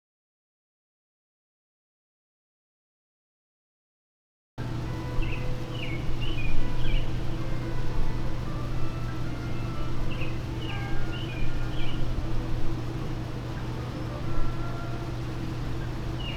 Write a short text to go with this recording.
The sounds of my patio outside the music studio in our apartment in Georgetown. Normally there are sounds of music from street musicians, chatter from conversations, and the traffic sounds from Wisconsin Ave and M st. Faintly, one can still hear these sounds as a few people pass by, as well as music coming out of the studio. The sounds were peaceful with birds chirping and a light breeze gently waving a flag from a building next door.